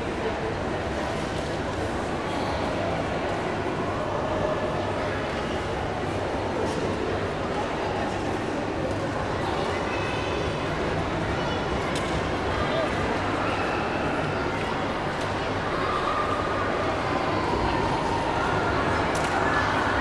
Tate Modern - London, UK - Tate Modern Turbine Hall